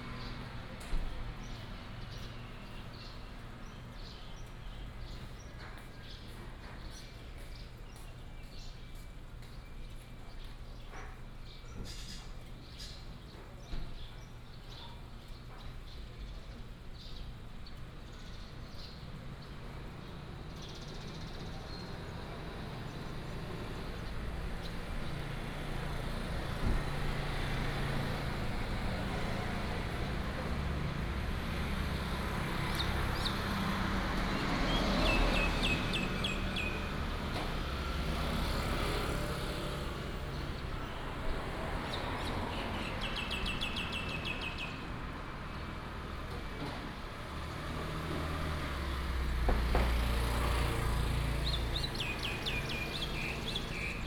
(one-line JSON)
{"title": "太麻里街74-78號, Tavualje St., Taimali Township - Morning street", "date": "2018-04-01 08:09:00", "description": "Morning street, Traffic sound, Bird cry, Seafood shop\nBinaural recordings, Sony PCM D100+ Soundman OKM II", "latitude": "22.61", "longitude": "121.01", "altitude": "15", "timezone": "Asia/Taipei"}